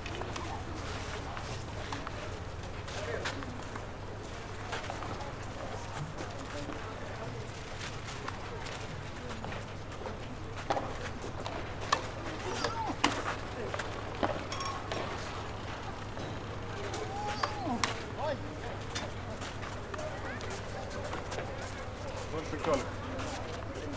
B. Radvilaitės str., Vilnius - Tennis court action
Sounds of the Bernardinai garden tennis court during busy hours. Recorded with ZOOM H5.